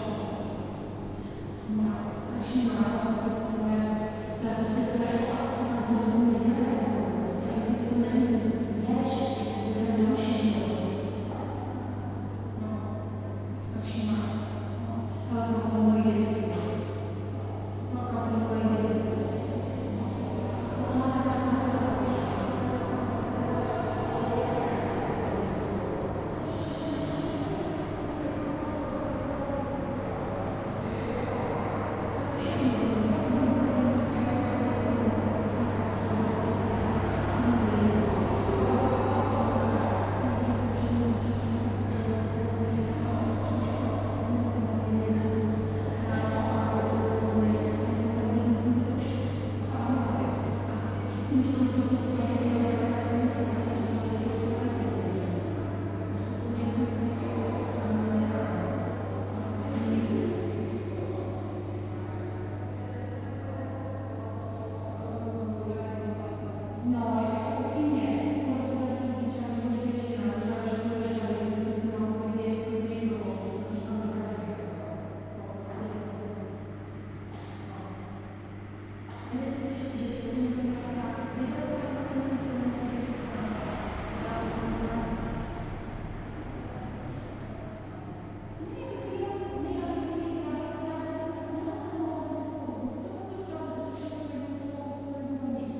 Roudnice nad Labem, Česká republika - vlak a hlasy na nádraží v Roudnici
posunovaný vlak a hlas paní v hale